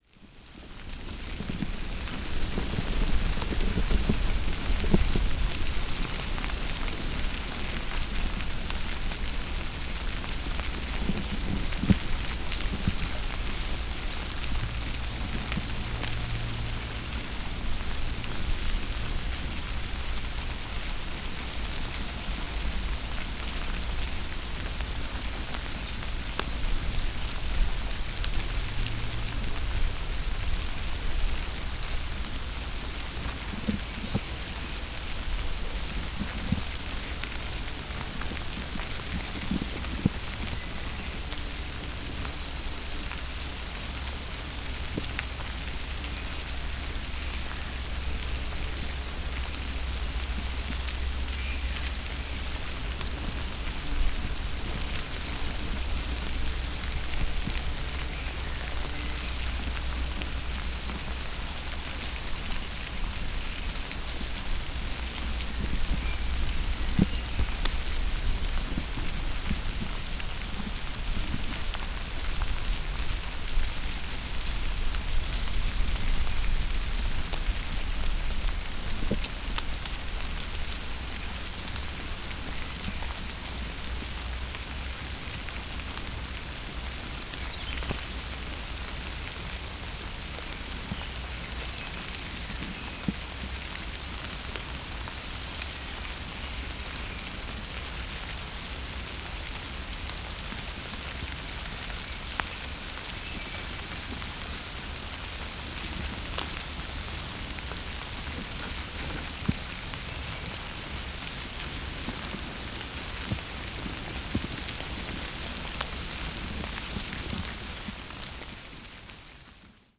Morasko Nature Reserve, path crossing - anthill
intense rustle of a very active anthill. a morphing bed of ants covering a patch of about two meters. I picked up the sound of it already about 20-15 meters away. at first i thought it's a small rustling stream or a tree with shriveled leaves o that it is begging to rain to find this huge, bustling anthill only then. the recorder placed on two logs, very close to the ground. ants climbing the windscreen.
Suchy Las, Poland